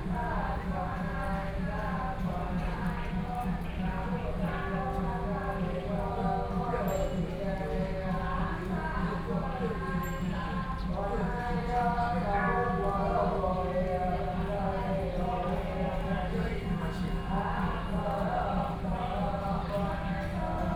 中山區集英里, Taipei City - Sitting intersection
Sitting intersection, Temple chanting voices, Traffic Sound
Sony PCM D50+ Soundman OKM II